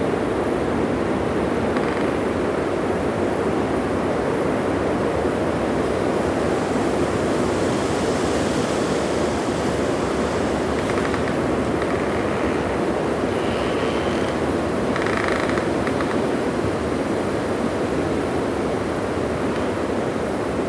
Neringos sav., Lithuania - Nida Forest at Night
Recordist: Saso Puckovski. Calm night inside the forest, woodpeckers, nocturnal insects, wind. Recorded with ZOOM H2N Handy Recorder.
2 August 2016